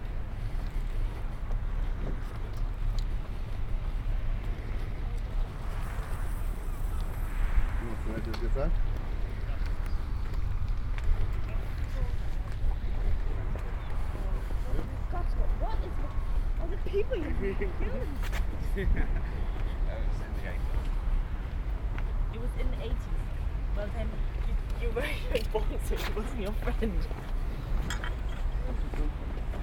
Total time about 36 min: recording divided in 4 sections: A, B, C, D. Here is the first: A.
24 May, 14:01